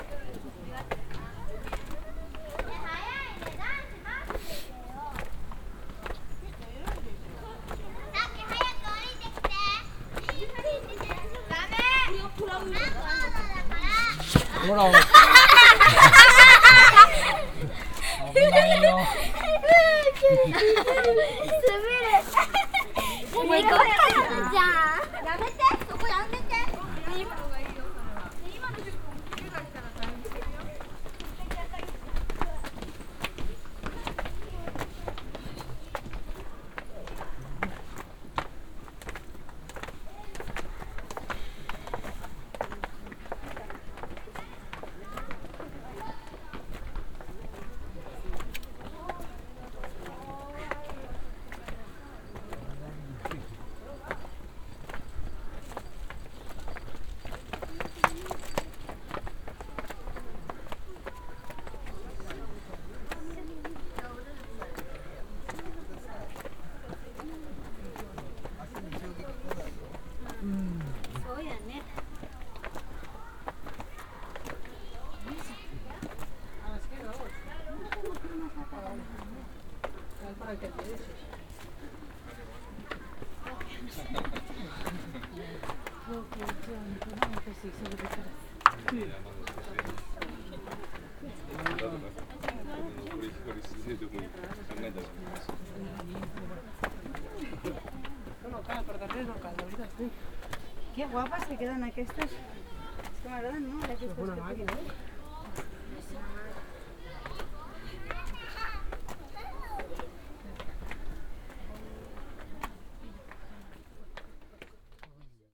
nikkō, tōshō-gu shrine, path of the 200 steps
on the path of the 200 steps a stairway leading to the grave monument of Tokugawa leyasu the founder of the Tokugawa dynasty. while walking up a boy slips of the steps and fells down, other kids laugh out loud, then the walk up the stone steps continous.
international city scapes and topographic field recordings